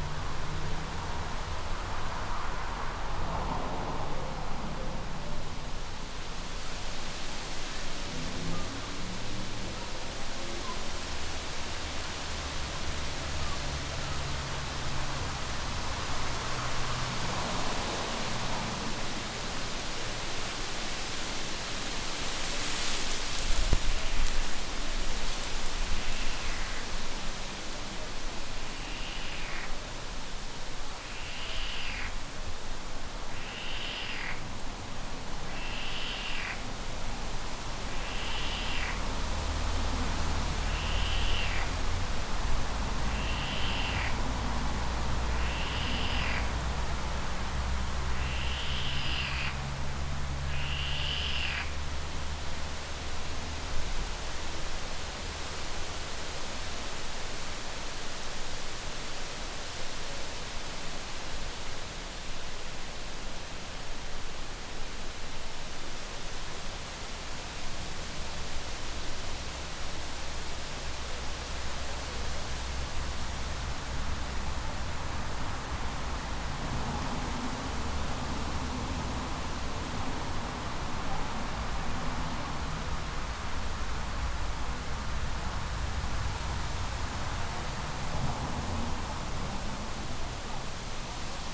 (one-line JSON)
{"title": "Ponte Spin' a Cavallu, Sartène, France - Ponte Spin", "date": "2022-07-26 16:00:00", "description": "wind in trees, dog, people, frog, road noise\nCaptation : ZOOM H6", "latitude": "41.66", "longitude": "8.98", "altitude": "25", "timezone": "Europe/Paris"}